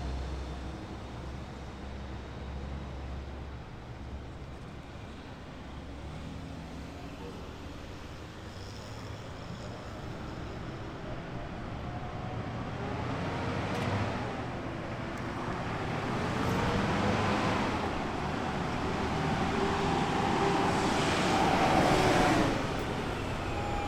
{"title": "Outside Bodega, Corner of Rockaway Avenue & Sumpter Street, Ocean Hill, Brooklyn, NY, USA - B.E.E.S. - MaD Community Exploration Soundwalk 1", "date": "2013-08-20 13:24:00", "description": "Anthrophonic soundscape outside corner bodega, near the oldest school public school building in Brooklyn, NY, which houses the new Brooklyn Environmental Exploration School. Captured during a Making a Difference workshop, facilitated by Community Works, which models tools for connecting students to communities. 6 minutes, 12 sec. Metallic sound at 115 sec. in is the door of the bodega.", "latitude": "40.68", "longitude": "-73.91", "timezone": "America/New_York"}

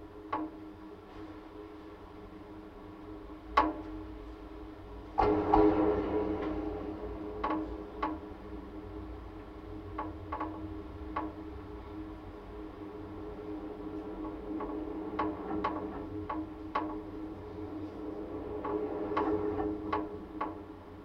{"title": "Vilnius, Lithuania, metallic constructions of the bridge", "date": "2019-09-28 14:30:00", "description": "contact microphones on metallic constructions of bridge.", "latitude": "54.69", "longitude": "25.29", "altitude": "91", "timezone": "Europe/Vilnius"}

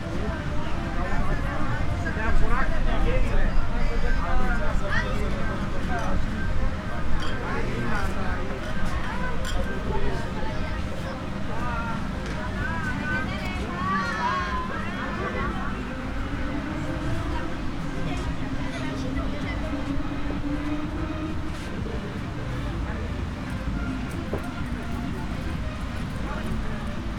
{"title": "Mamaia Beach, Constanța, Romania - Small Pub on the Beach", "date": "2019-06-23 23:00:00", "description": "Usually bars on the beach in Mamaia play pretty loud music occupying or rather invading the soundscape. This one was pretty tame and chill so a nice balance of sonic layers can be heard: the sea, people, low-music. Recorded on a Zoom F8 using a Superlux S502 ORTF Stereo Microphone.", "latitude": "44.26", "longitude": "28.62", "altitude": "3", "timezone": "Europe/Bucharest"}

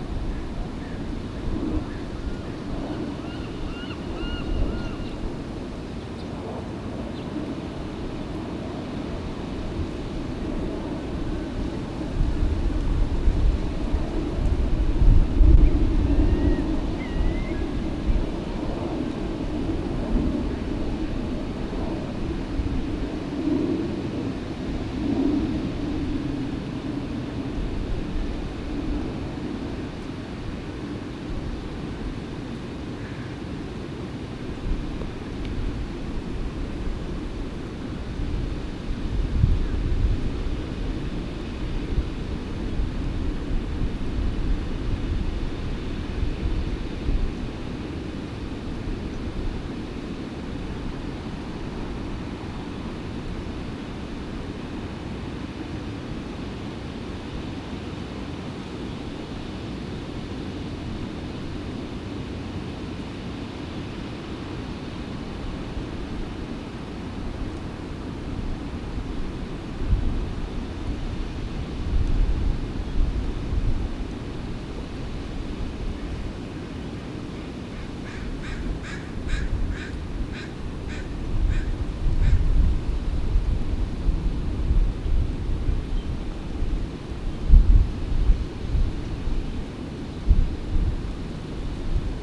{"title": "Ballard Locks - Ballard Locks #2", "date": "1998-11-13 12:06:00", "description": "The Hiram M. Chittenden Locks, popularly known as the Ballard Locks, raise and lower boats traveling between freshwater Lake Washington and saltwater Puget Sound, a difference of 20 to 22 feet (depending on tides). A couple hundred yards downstream is a scenic overlook, almost directly beneath the Burlington Northern trestle bridge shown on the cover. From that spot we hear a portrait of commerce in 3-dimensions: by land, by air and by sea.\nMajor elements:\n* The distant roar of the lock spillway and fish ladder\n* Alarm bells signifying the opening of a lock\n* Boats queuing up to use the lock\n* Two freight trains passing overhead (one long, one short)\n* A guided tour boat coming through the lock\n* Planes and trucks\n* Two walkers\n* Seagulls and crows", "latitude": "47.67", "longitude": "-122.40", "altitude": "34", "timezone": "America/Los_Angeles"}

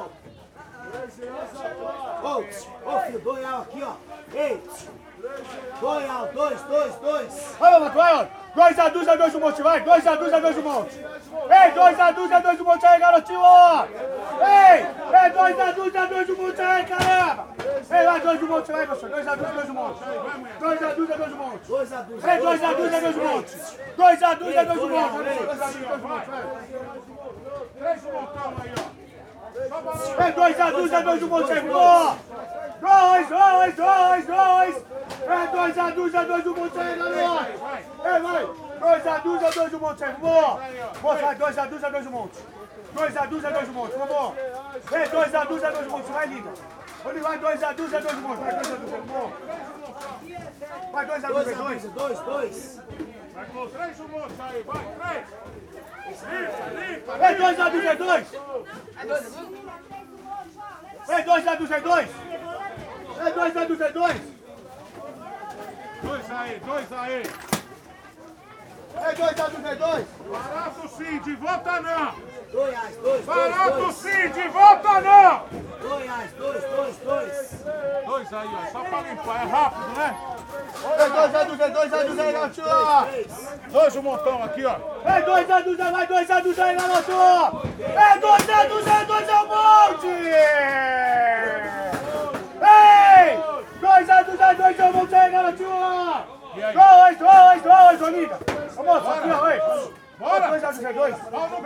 {"title": "Street Market Perus (Sao Paulo) - Banana seller in a Brazilian market", "date": "2022-06-03 15:00:00", "description": "In a peripheral area of Sao Paulo (Perus), Alessandro is one of the sellers from the \"Tigueis Banana\" stand. At the end of the market, the prices are low and he has to scream to sell all the banana before the end of the street market.\nRecorded by an ORTF setup Schoeps CCM4\non a Cinela ORTF suspension and a DIY Windscreen\nGPS: -23.407617, -46.757858\nSound Ref: BR-220603-03\nRecorded on 3rd of June 2022 at 3pm", "latitude": "-23.41", "longitude": "-46.76", "altitude": "775", "timezone": "America/Sao_Paulo"}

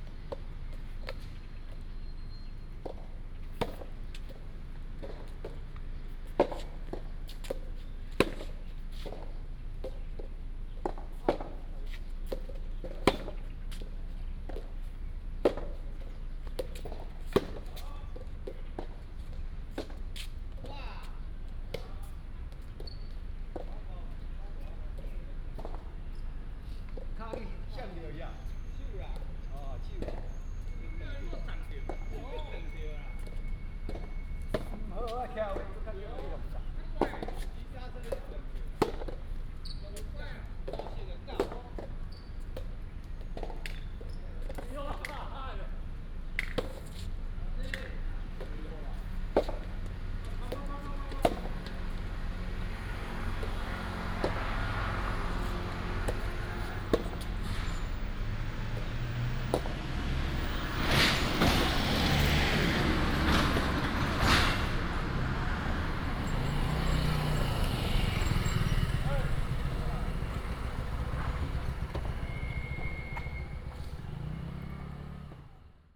{"title": "新竹公六網球場, Hsinchu City - Playing tennis", "date": "2017-09-15 06:19:00", "description": "Next to the tennis court, traffic sound, Playing tennis, Binaural recordings, Sony PCM D100+ Soundman OKM II", "latitude": "24.80", "longitude": "120.96", "altitude": "23", "timezone": "Asia/Taipei"}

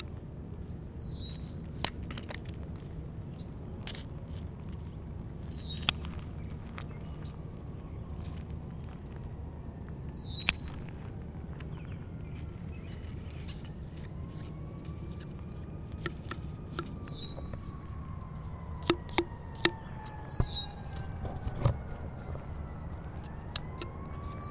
{"title": "Washington Park, South Doctor Martin Luther King Junior Drive, Chicago, IL, USA - Summer Walk 3", "date": "2011-06-18 14:45:00", "description": "Recorded with Zoom H2. Interactive walk through Washington Pk. Exploring the textures and rhythm of twigs bark, gravel and leaves.", "latitude": "41.79", "longitude": "-87.61", "altitude": "188", "timezone": "America/Chicago"}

